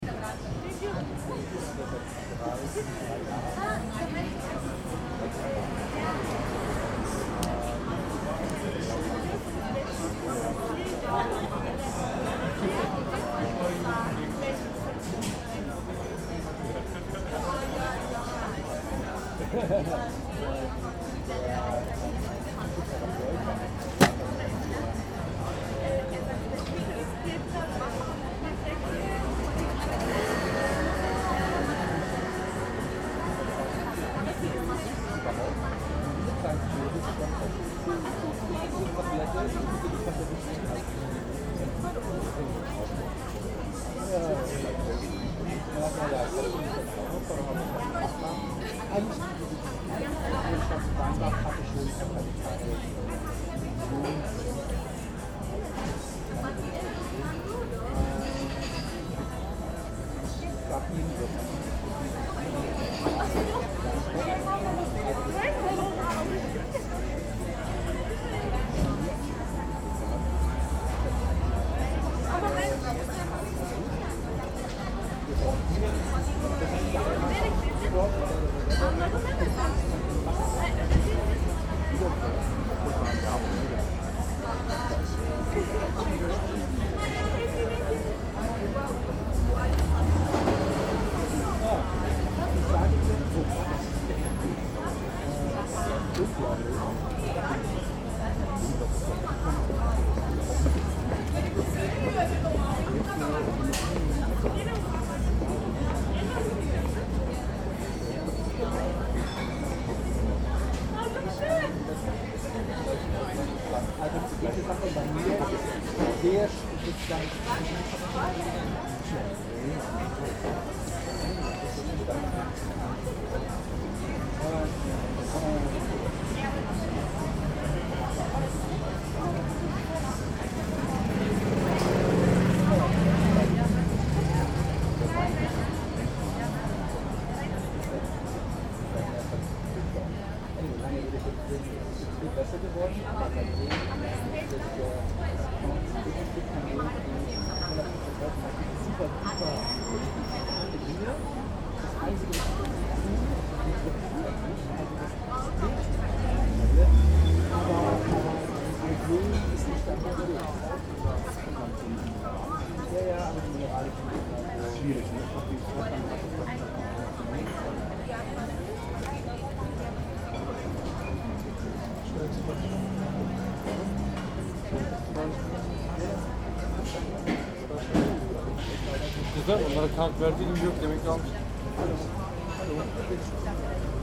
General atmosphere on the terrace of Café Maibach. Zoom H@ internal mics.